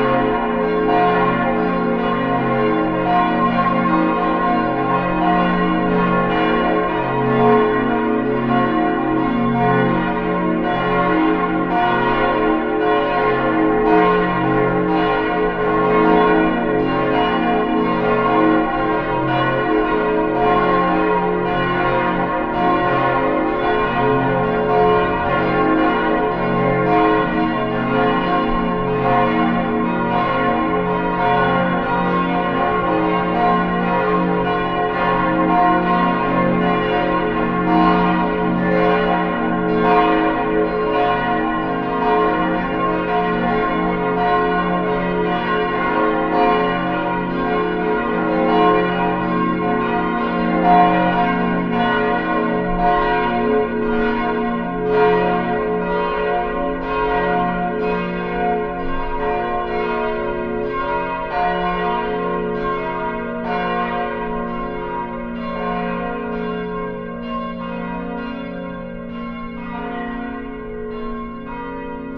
Backyard, Mannheim, Deutschland - Churchbells
Sound of the Bells of the Jesuit Church recorded in a backyard close by. Recorded with a Sound Devices 702 field recorder and a modified Crown - SASS setup incorporating two Sennheiser mkh 20 microphones.